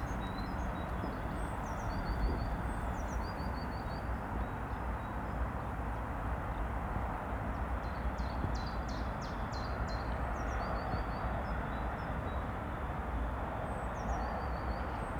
From the edge of the bridge the traffic, trains and planes completely dominate the soundscape. However you are standing the same height as the tree tops. Birds singing or calling can be quite close and clear to hear. On this track is a chiffchaff and more distant goldfinches and great tits. The thumping sounds is wind ruffling the microphones.